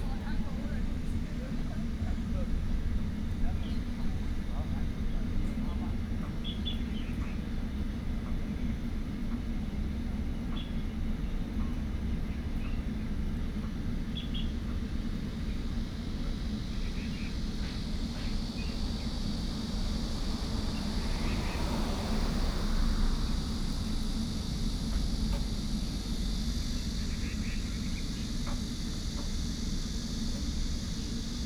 頭城鎮港口里, Yilan County - In the parking lot
Birdsong, Very hot weather, Traffic Sound
Toucheng Township, Yilan County, Taiwan, 7 July 2014